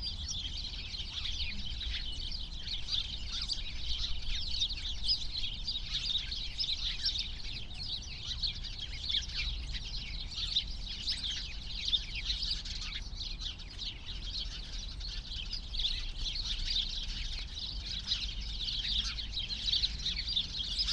Berlin, Schinkestr., Spielplatz - playground ambience /w sparrows
many sparrows (Hausspatzen) in a bush at playground Schinkestrasse
(Sony PCM D50, AOM5024)